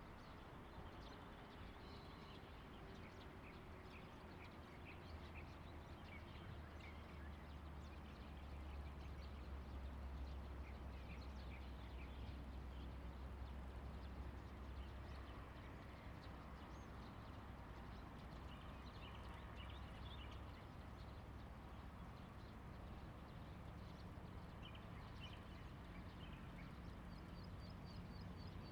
南太麻里橋, Taimali Township - On the river bank
On the river bank, Traffic sound, Bird cry, The cry of the crown, The distant train travels through
Zoom H2n MS+XY